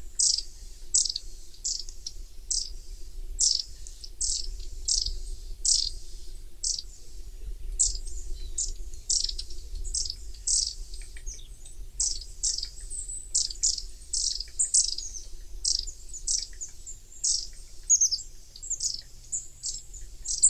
Lithuania, Utena, birds in winter
some birdie caught on the way
15 December 2010